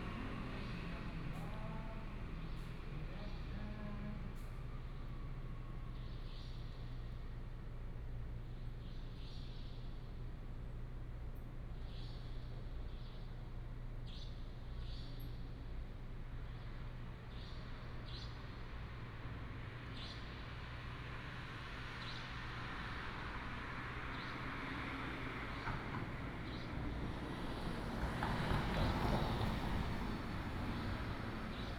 Wufu 4th Rd., Yancheng Dist., Kaohsiung City - Night street
Night street, Bird sound, Traffic sound
Kaohsiung City, Taiwan, 25 April 2018, 00:11